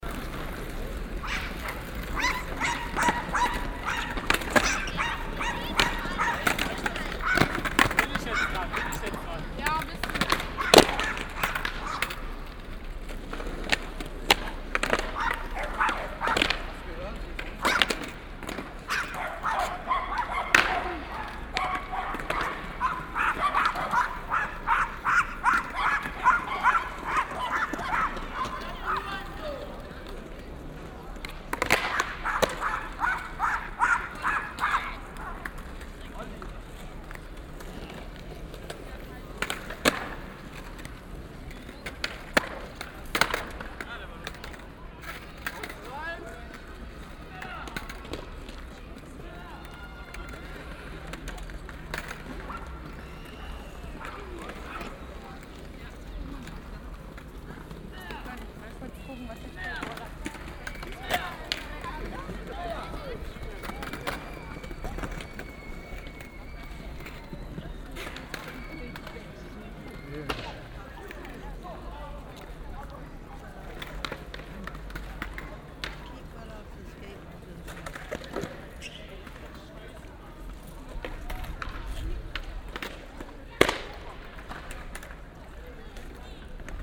cologne, dom plate, skater - cologne, domplatte, skater 02
nachmittags, passanten, skater, ein nervöser hund
soundmap nrw: social ambiences/ listen to the people - in & outdoor nearfield recordings
1 January 2009, 15:56